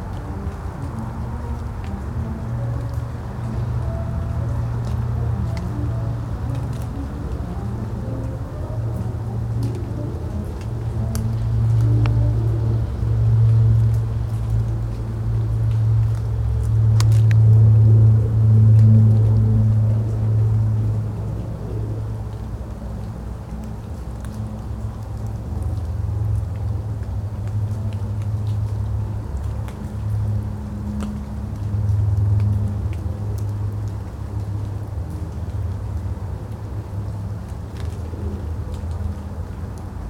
walking home along medvedova, music from a distant concert wafting through the air. recorded from the park at the end of the road, with water dripping from the trees from the day's rain.